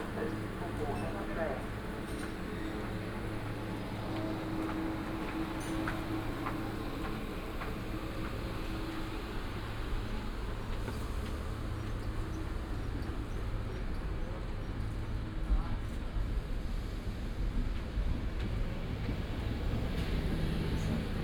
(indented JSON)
{
  "title": "Corniglia, train station - electric bells",
  "date": "2014-09-03 19:28:00",
  "description": "(binaural). two little electric bells attached to the front of the train station, ringing for no particular reason. at first I thought they ring when a train arrives at the station but then I noticed them ringing for long minutes even if no train was coming.",
  "latitude": "44.12",
  "longitude": "9.72",
  "altitude": "9",
  "timezone": "Europe/Rome"
}